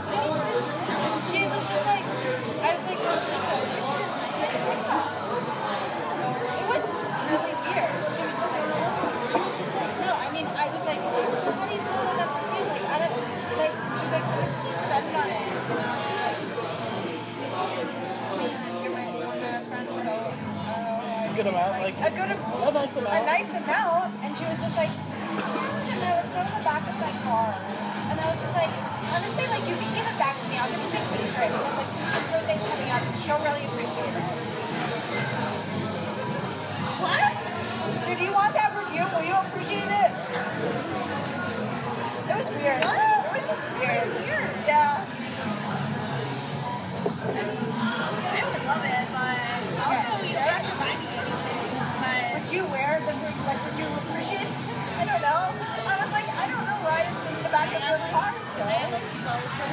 Boone, NC, USA - Boone Saloon
A recording from 'Taco Tuesday' at Boone Saloon.